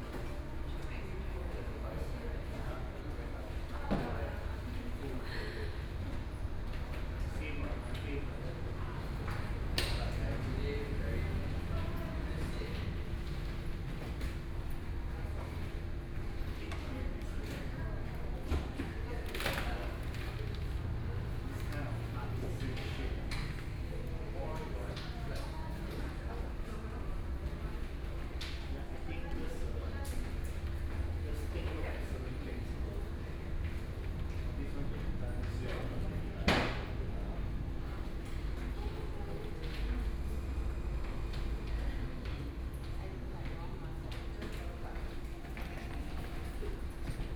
Museum of Contemporary Art, Taipei - Museum lobby
in the Museum lobby, Wood flooring, Sony PCM D50 + Soundman OKM II
Taipei City, Taiwan, 9 October, 11:53